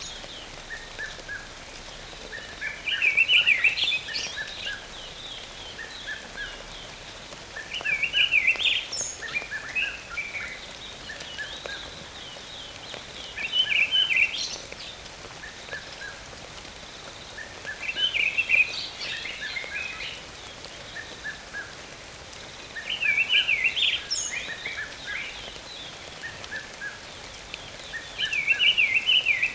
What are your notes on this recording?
Early morning. Light rain. various birds. EM172's on a Jecklin Disc to H2n.